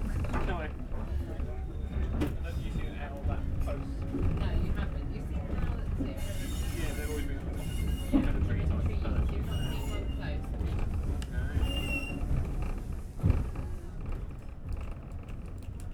Return steam train journey between Totnes and Buckfastleigh. As with the earlier journey from Buckfastleigh, there is the sound of the creaking carriage and an occasional hoot of the engines whistle. Recorded on a Zoom H5
Totnes, UK, 12 September, 15:00